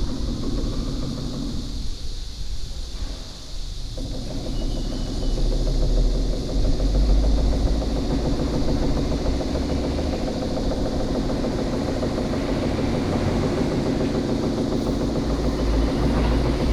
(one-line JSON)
{"title": "Sec., Zhongshan W. Rd., Xinwu Dist. - Viaduct construction", "date": "2017-07-26 09:29:00", "description": "Viaduct construction, traffic sound, Cicada cry, birds sound", "latitude": "24.99", "longitude": "121.02", "altitude": "5", "timezone": "Asia/Taipei"}